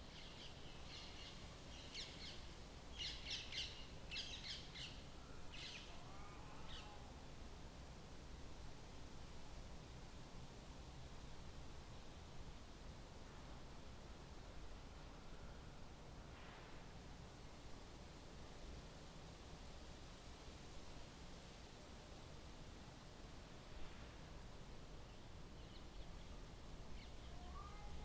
Talmastraat, Amsterdam, Nederland - Parkieten/ Parakeets
(description in English below)
Deze wijk zit zomers vol parkieten. Ze zitten in de bomen en bewegen zich in een grote groep van de ene naar de andere boom. De straat wordt een soort landingsbaan waarop de parkieten in een razend tempo opstijgen en voorbij zoeven.
In the summer this neighbourhood is full of parakeets. They sit in the trees and move in large groups from one tree to another. This street is used as a runway in which the parakeets pace off in high speed.
Amsterdam, The Netherlands, 20 September 2013